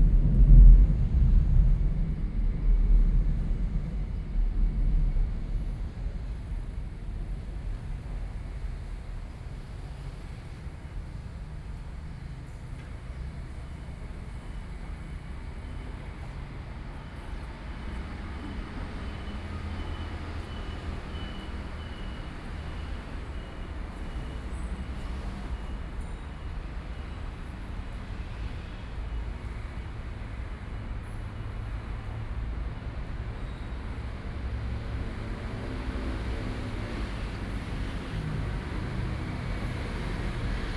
Beitou, Taipei - under the MRT track
北投區, 台北市 (Taipei City), 中華民國, 23 October 2012